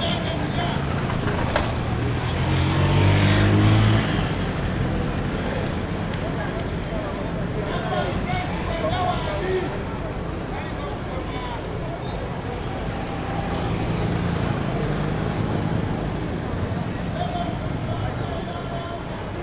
{
  "title": "near luwumstreet, Kampala, Uganda - sitting in a salooncar",
  "date": "2013-08-14 11:15:00",
  "description": "sitting in a salooncar, waiting, recorder at the window, smooth traffic, recorded with a zoom h2",
  "latitude": "0.31",
  "longitude": "32.58",
  "altitude": "1193",
  "timezone": "Africa/Kampala"
}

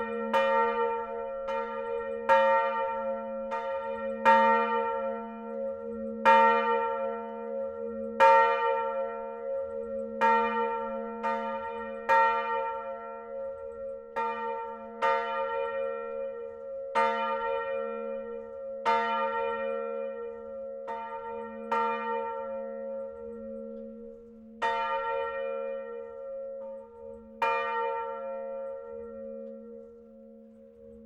{
  "title": "Rue du Maréchal Foch, Brillon, France - Brillon (Nord) - église St-Armand",
  "date": "2021-03-15 14:00:00",
  "description": "Brillon (Nord)\néglise St-Armand\nVolée 2 cloches",
  "latitude": "50.44",
  "longitude": "3.33",
  "altitude": "20",
  "timezone": "Europe/Paris"
}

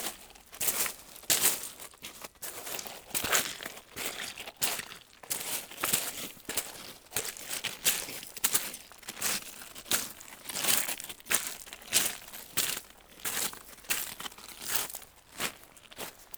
{
  "title": "Woignarue, France - Walking on the pebbles",
  "date": "2017-11-01 14:00:00",
  "description": "Walking on the pebbles on a shingle beach, near the small city called Ault.",
  "latitude": "50.11",
  "longitude": "1.45",
  "altitude": "3",
  "timezone": "Europe/Paris"
}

